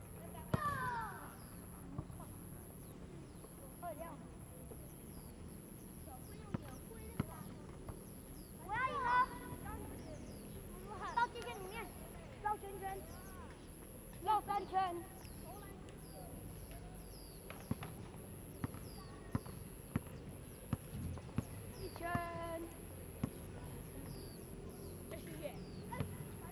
A group of children in the playground, Traffic Sound, Birdsong
Zoom H2n MS +XY
Yuli Township, Hualien County, Taiwan, 7 September 2014